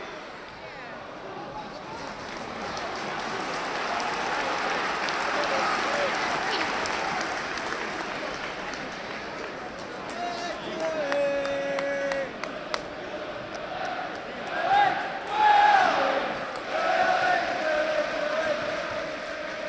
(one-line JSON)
{"title": "Elland Road Stadium, Leeds, West Yorkshire, UK - Leeds United final match of the season", "date": "2015-05-02 13:20:00", "description": "Binaural recording of the last match of the season between Leeds United and Rotherham, season 2014/2015.\nZoom H2N + Soundmann OKM II.", "latitude": "53.78", "longitude": "-1.57", "altitude": "51", "timezone": "Europe/London"}